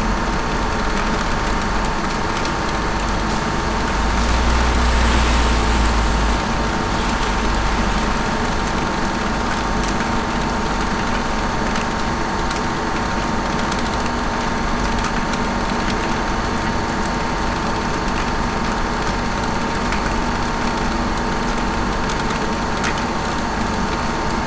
Jazminų g. 13 - Large air vent amidst droplets
Large air vent of an industrial building humming amidst rain droplets dripping from the roof. Recorded with ZOOM H5.